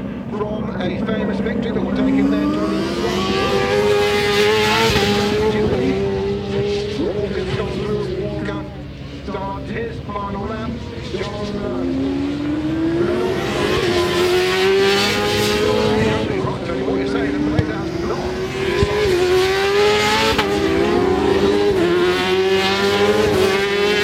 British Superbikes 2000 ... race one ... one point stereo mic to minidisk ...